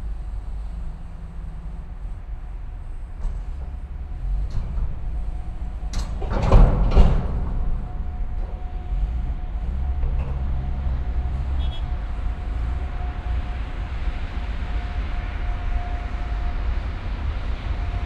container terminal, Ljubljana - loading, vehicle at work
a specialized vehicle is moving containers from a train to a vans
(Sony PCM D50, DPA4060)